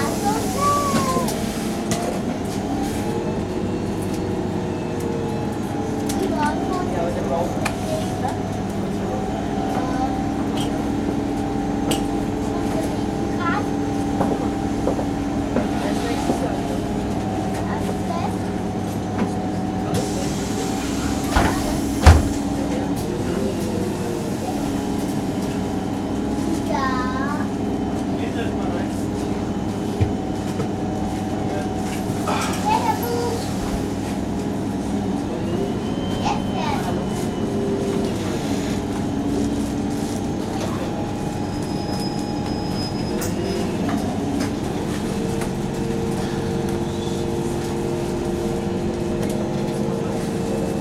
taking the midtown bus from the station, two stops, a little child is talking